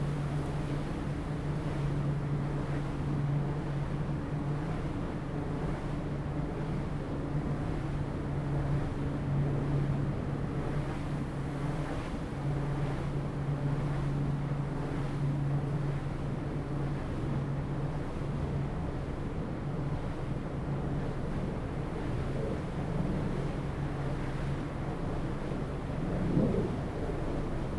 Zhunan Township, Miaoli County - In the woods
In the woods, wind, Wind Turbines, Zoom H2n MS+XY